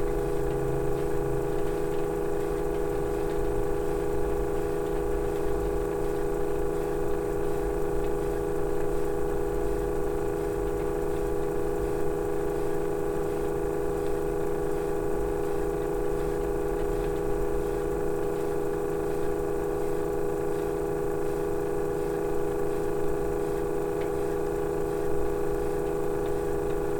Kochanowskiego, Poznan - tape deck
recorder placed against a tape player. sound of the tape motor running and swish of the tape on the heads. (roland r-07 internal mics)